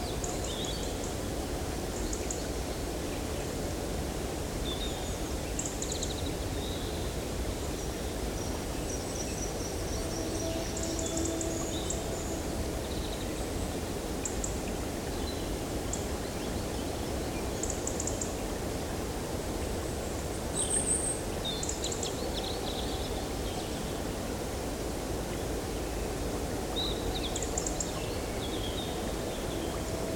Unnamed Road, Champsecret, France - Quiet Andaine forest
Peaceful place into the heart of the forest.
ORTF
DR 100 MK3
LOM Usi Pro.